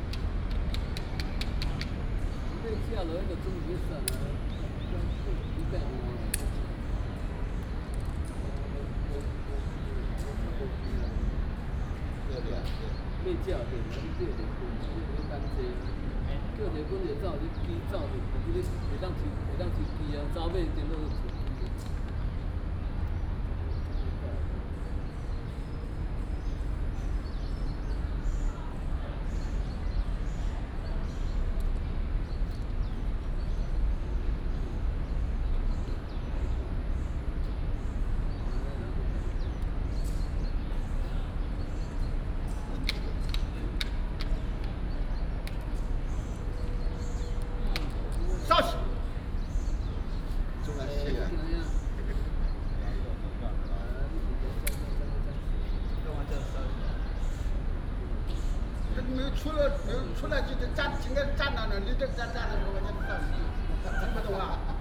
National Dr. Sun Yat-sen Memorial Hall, Taipei City - Play chess
Play chess, A group of men playing chess, Hot weather
Taipei City, Taiwan, 22 June 2015